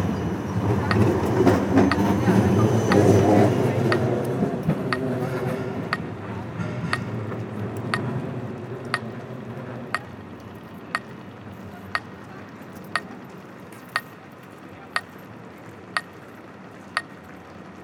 {"title": "Amsterdam, Nederlands - Red light", "date": "2019-03-28 11:00:00", "description": "The sound of a red light into a dense traffic, tramways breaking through and planes from the Schiphol airport.", "latitude": "52.37", "longitude": "4.89", "altitude": "3", "timezone": "Europe/Amsterdam"}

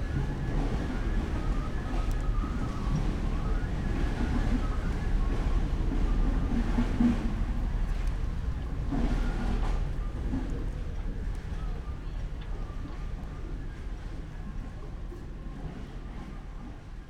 {"title": "Sopot, Poland, on the pier", "date": "2014-08-14 14:00:00", "description": "light rain, people seeking for hiding on the pier", "latitude": "54.45", "longitude": "18.58", "timezone": "Europe/Warsaw"}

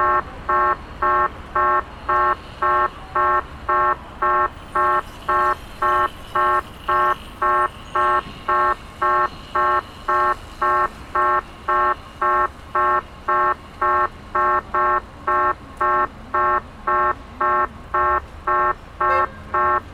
{"title": "beijing, walksignal", "date": "2010-04-13 16:11:00", "description": "pedrestrian, walk, signal, sound", "latitude": "39.94", "longitude": "116.44", "altitude": "51", "timezone": "Asia/Shanghai"}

